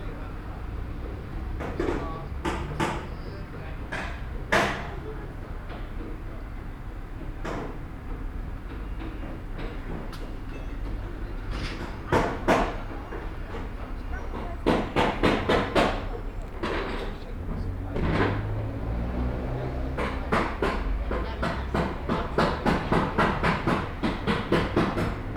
Homerton, London Borough of Hackney, London, UK - Bohemia Place